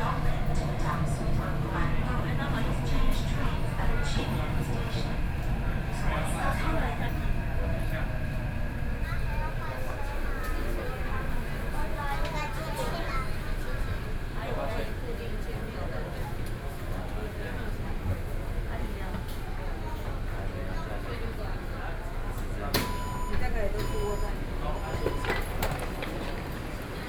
Tamsui-Xinyi Line, Taipei City - in the train
inside the MRT Train, Sony PCM D50 + Soundman OKM II
Beitou District, Taipei City, Taiwan, 16 June